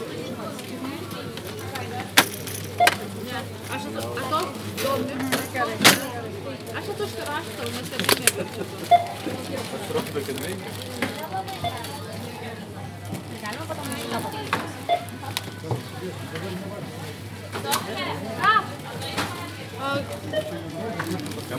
Nida, Lithuania - Maxima Supermarket Interior
Recordist: Saso Puckovski
Description: Close to the supermarket entrance. People in line talking, scanning sounds and groceries being bagged. Recorded with ZOOM H2N Handy Recorder.